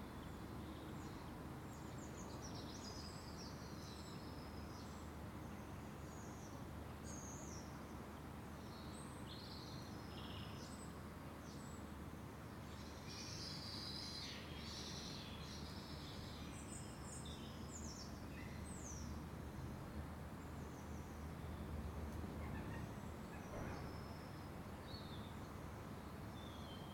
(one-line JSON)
{"title": "Contención Island Day 49 inner northeast - Walking to the sounds of Contención Island Day 49 Monday February 22nd", "date": "2021-02-22 11:44:00", "description": "The Poplars Roseworth Avenue The Grove Roseworth Crescent Roseworth Close\nAn unlikely haven\nfrom the sounds of traffic\nAn ivy-grown wall\ntwo pruned birch trees beyond\nBirds flick through the shrubbery\nThe delivery man places the parcel\nrings the bell\nand leaves", "latitude": "55.00", "longitude": "-1.62", "altitude": "68", "timezone": "Europe/London"}